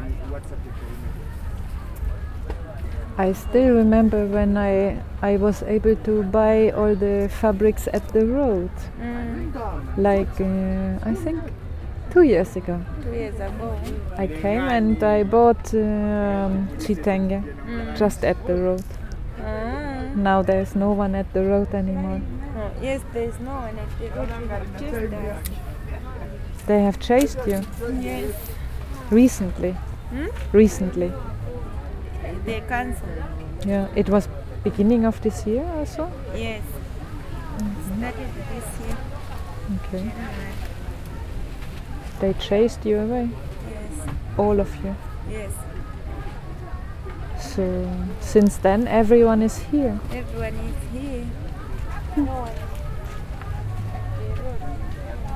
Street Market, Choma, Zambia - Talking to Chitenge traders...
...continuing my stroll among the Chitenge traders... chatting...
13 August 2018, 10:45